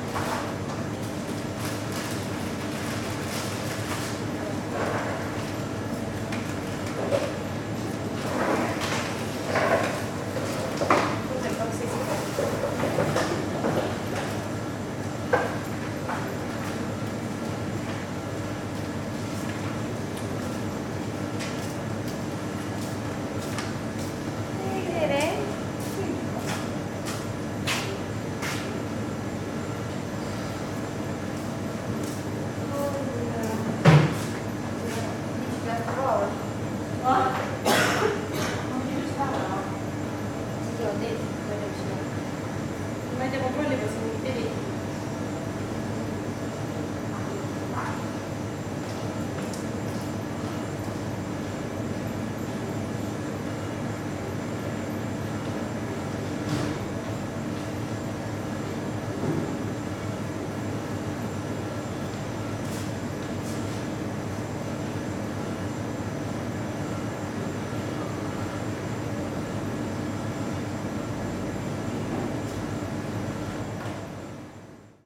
Mäetaguse Shop. Mäetaguse Estonia
sounds captured inside the local shop. recorded during the field work excursion for the Estonian National Museum.